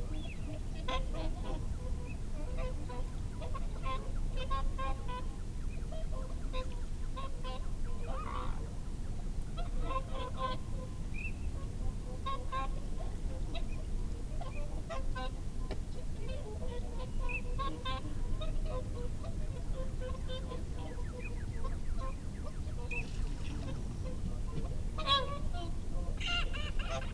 Dumfries, UK - whooper swan soundscape ...
whooper swan soundscape ... dpa 4060s clipped to a bag to zoom f6 ... folly pond ... bird calls from ... teal ... shoveler ... mallard ... oystercatcher ... mute swan ... barnacle geese ... wigeon ... lapwing ... redwing ... dunlin ... curlew ... jackdaw ... wren ... dunnock ... lapwing ... some background noise ... love the occasional whistle from wings as birds fly in ... possibly teal ... bits of reverb from the whoopers call are fascinating ... time edited unattended extended recording ...